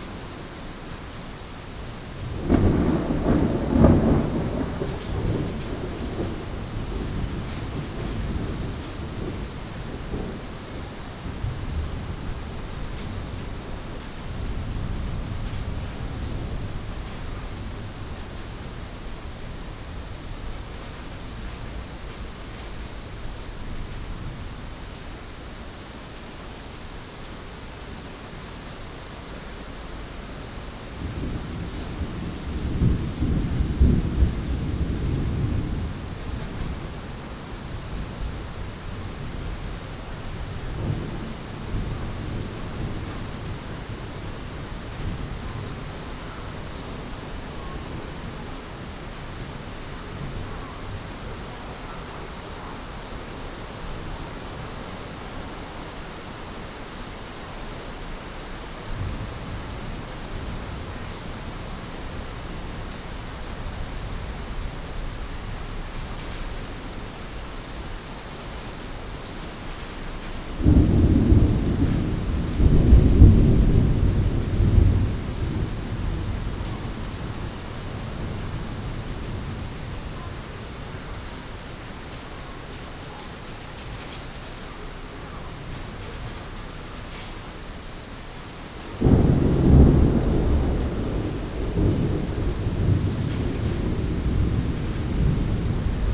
基隆火車站, Keelung City - Thunderstorms
Thunderstorms
Binaural recordings
Sony PCM D100+ Soundman OKM II
Keelung City, Taiwan, 18 July